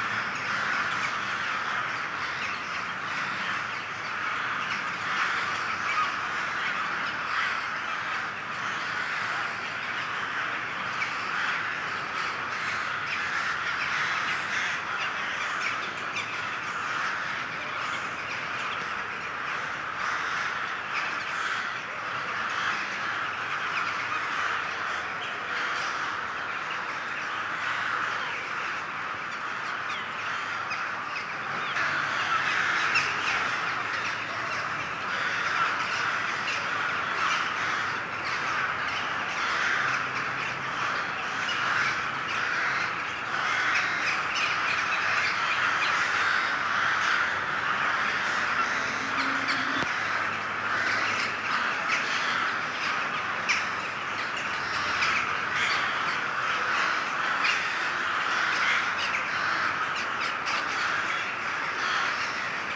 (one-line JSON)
{"title": "Parcul Cișmigiu - Attack of the crows", "date": "2016-08-14 19:47:00", "description": "The crows awaken in the Cismigiu Gardens.\nRecorded using a Tascam DR 22WL.", "latitude": "44.44", "longitude": "26.09", "altitude": "85", "timezone": "Europe/Bucharest"}